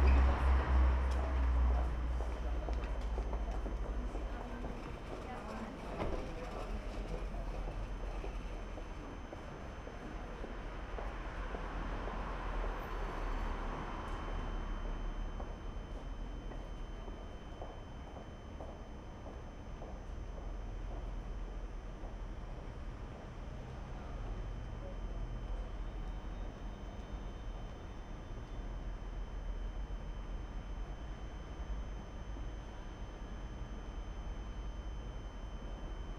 Bhf Süd, Köln - friday night, various traffic
Bahnhof Süd Köln at night, small train station, various traffic: trains, trams, cars and pedestrians
(tech: sony pcm d50, audio technica AT8022)
Cologne, Germany, 9 March 2012